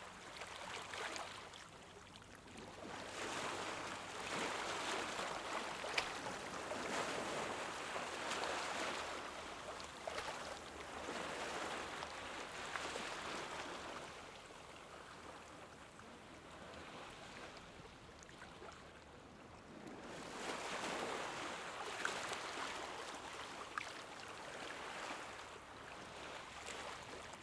Cor Président John Fitzgerald Kennedy, Marseille, France - Marseille - Plage du Prophète
Marseille
Plage du prophète
Ambiance du matin à l'heure de l'ouverture des activités nautiques
21 August 2019, 09:30